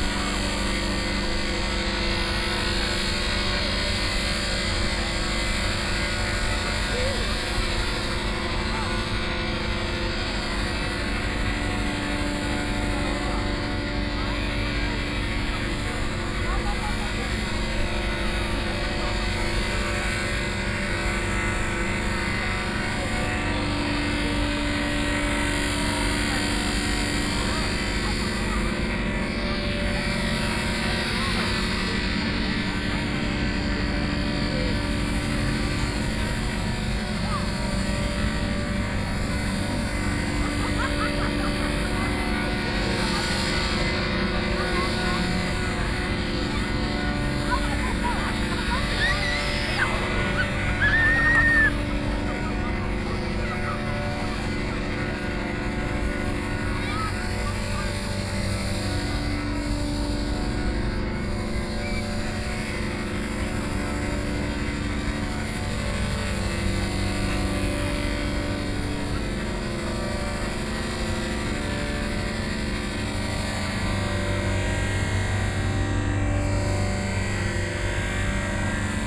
{"title": "THE GRAND GREEN, Taipei - on the lawn at night", "date": "2013-09-28 21:05:00", "description": "The crowd on the lawn at night, Distant electronic music, Sony PCM D50 + Soundman OKM II", "latitude": "25.05", "longitude": "121.53", "altitude": "9", "timezone": "Asia/Taipei"}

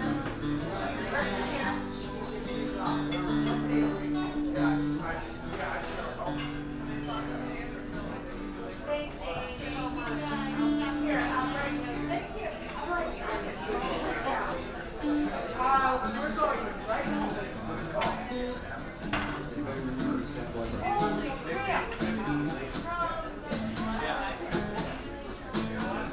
{"title": "Pleasanton Hwy., Bear Lake, MI - Restaurant Interior as Snow Descends", "date": "2016-03-23 19:15:00", "description": "A big crowd is gathered on an early Wednesday night, as a ton of fresh snow blankets the outdoors. Hubbub and live music at Grille 44, currently the only bar and restaurant open evenings in Bear Lake. Stereo mic (Audio-Technica, AT-822), recorded via Sony MD (MZ-NF810, pre-amp) and Tascam DR-60DmkII.", "latitude": "44.43", "longitude": "-86.13", "altitude": "239", "timezone": "America/Detroit"}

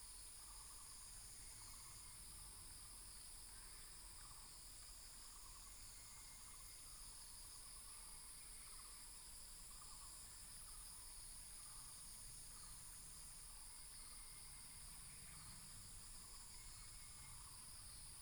{"title": "明峰村, Beinan Township - Birdsong and Frogs", "date": "2014-09-07 07:59:00", "description": "In the morning, Birdsong, Frogs, Traffic Sound", "latitude": "22.87", "longitude": "121.10", "altitude": "277", "timezone": "Asia/Taipei"}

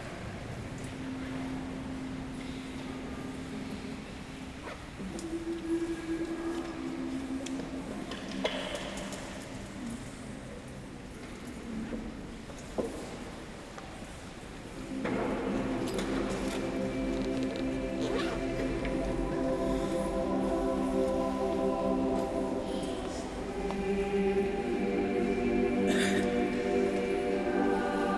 {"title": "Mechelen, Belgique - Mass", "date": "2018-10-21 11:00:00", "description": "The mass in the OLV-over-de-Dijlekerk. In first, the priest speaking. After, people praying and at the end, beautiful songs of the assembly. During the vocal, the offertory : people opening the wallets and a lot of squeaking of the old benches.", "latitude": "51.02", "longitude": "4.48", "altitude": "11", "timezone": "Europe/Brussels"}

